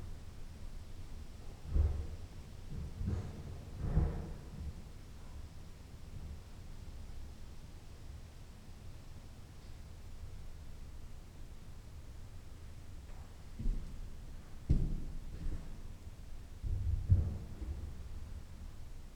ein organist betritt die dorfkirche von st. peter ording und beginnt nach einer weile, ein orgelstueck, vermutlich von bach, zu spielen: an organ-player entering the church of st. peter and starting to play a piece presumably by js bach
Sankt Peter-Ording, Germany, 9 August 2014, ~18:00